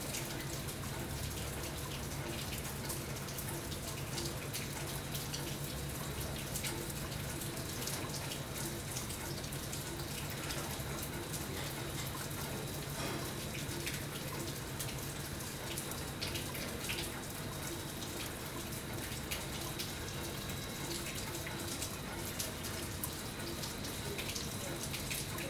Broads Rd, Lusaka, Zambia - Lusaka rains...
soundscapes of the rainy season in Lusaka...